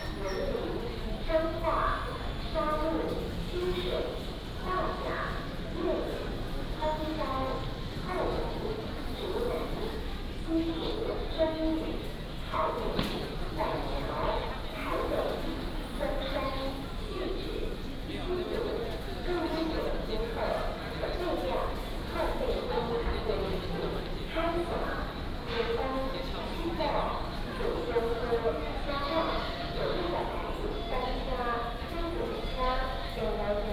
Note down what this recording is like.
At the station platform, Station information broadcast, Station is very busy time